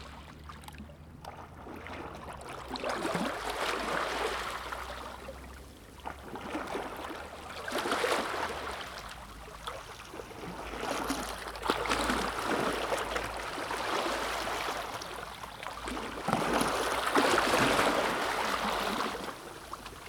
Bowling Green, Lamlash, Isle of Arran, UK - Swans in sunset